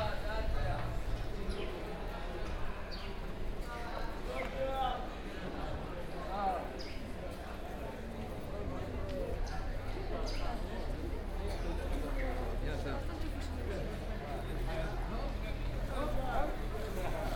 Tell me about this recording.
walking from this point into the Medina